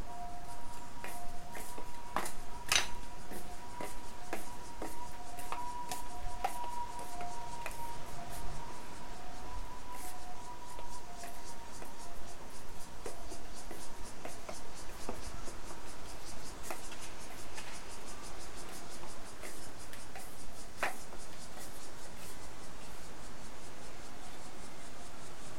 Morning on the Balcony - Morning on the Balcony (exit5, Guting Station)
I walked on the balcony and heard the voices of the view below.